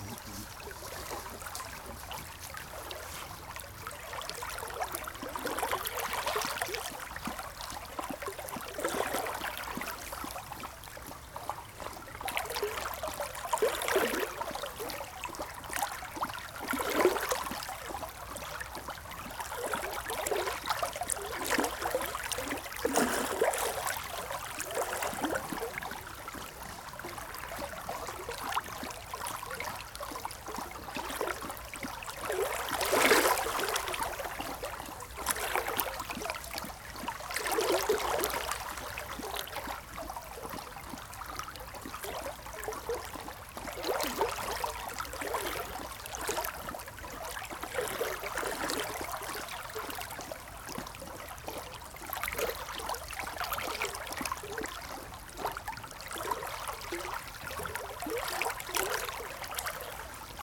Unnamed Road, Česká Lípa, Česko - Šporka creek
The sound of running water in the Šporka creek, a random dog came for a drink. Tascam DR-05x, built-in microphones
31 October, 14:39